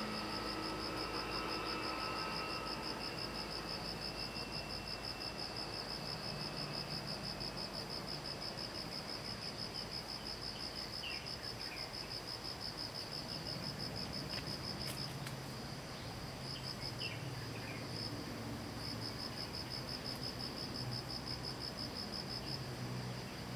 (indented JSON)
{
  "title": "Taomi River, 埔里鎮桃米里 - Insect sounds",
  "date": "2015-09-17 06:27:00",
  "description": "Early morning, Birds singing, Insect sounds\nZoom H2n MS+XY",
  "latitude": "23.94",
  "longitude": "120.93",
  "altitude": "466",
  "timezone": "Asia/Taipei"
}